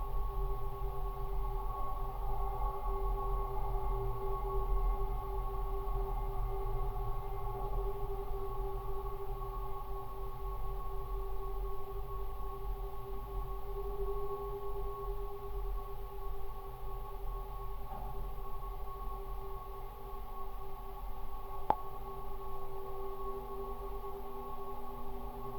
Kuktiškės, Lithuania. abandoned gas station
Abandoned gas station. Geophone on pillar holding the roof
Utenos apskritis, Lietuva, 9 October 2021